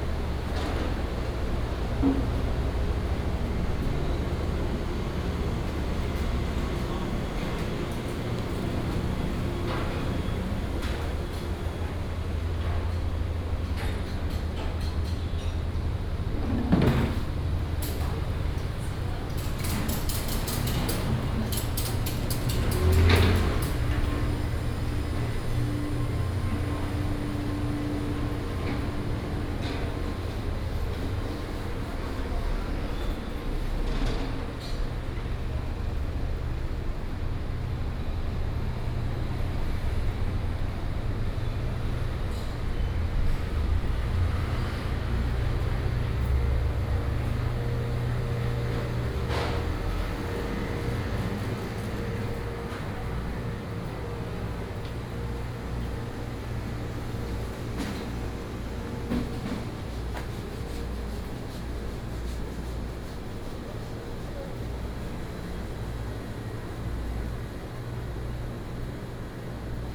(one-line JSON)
{"title": "建國市場, Taichung City - Walking through the old market", "date": "2016-09-06 16:27:00", "description": "Walking through the old market, Traffic Sound", "latitude": "24.14", "longitude": "120.69", "altitude": "82", "timezone": "Asia/Taipei"}